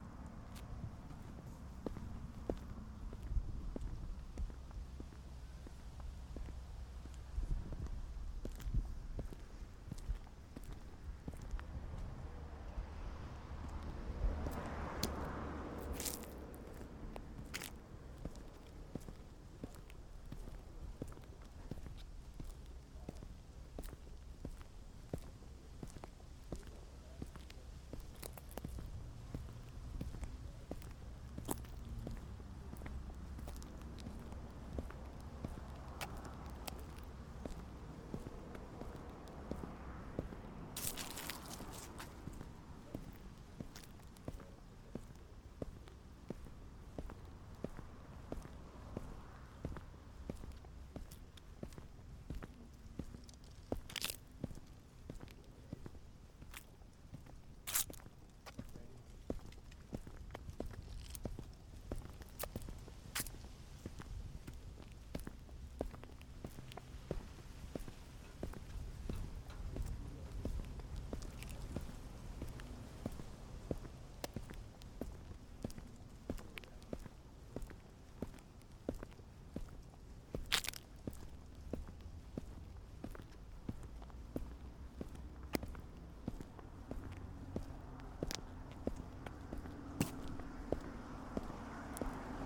Skov, Tårngade, Struer, Denmark - Dry leaves on Tårngade, Struer (left side of street) 1 of 2
Start: Søndergade/Tårngade
End: Tårngade/Ringgade